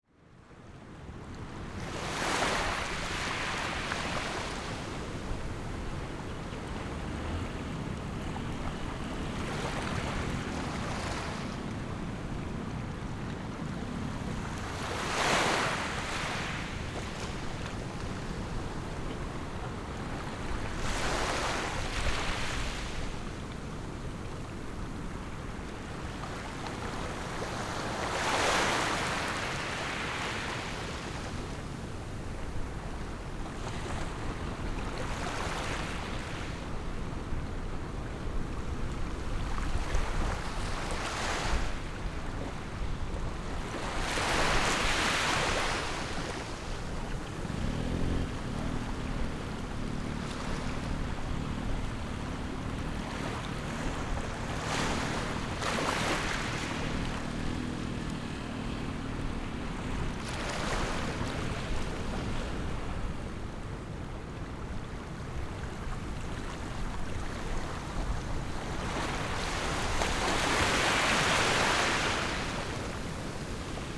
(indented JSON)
{
  "title": "Jizanmilek, Koto island - Small pier",
  "date": "2014-10-29 13:24:00",
  "description": "Small pier, sound of the waves\nZoom H6 +Rode NT4",
  "latitude": "22.04",
  "longitude": "121.57",
  "altitude": "4",
  "timezone": "Asia/Taipei"
}